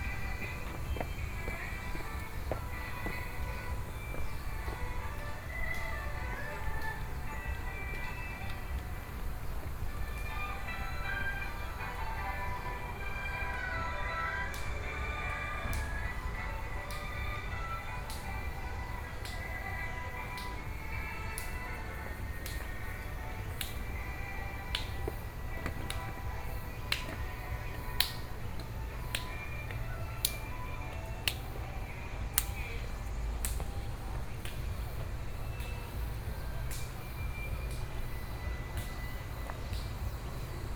{"title": "HutoushanPark, Taoyuan City - soundwalk", "date": "2013-09-11 09:15:00", "description": "walk in the Park, Sony PCM D50 + Soundman OKM II", "latitude": "25.00", "longitude": "121.33", "altitude": "154", "timezone": "Asia/Taipei"}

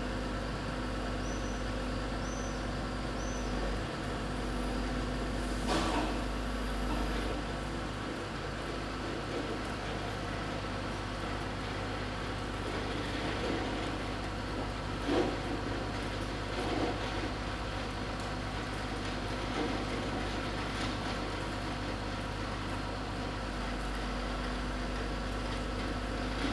{"title": "Carrer de Joan Blanques, Barcelona, España - Rain20042020BCNLockdown", "date": "2020-04-20 09:00:00", "description": "Recording made from a window during the coiv-19 lockdown. It's raining and you can also hear several sounds from the city streets. Recorded using a Zoom H2n.", "latitude": "41.40", "longitude": "2.16", "altitude": "65", "timezone": "Europe/Madrid"}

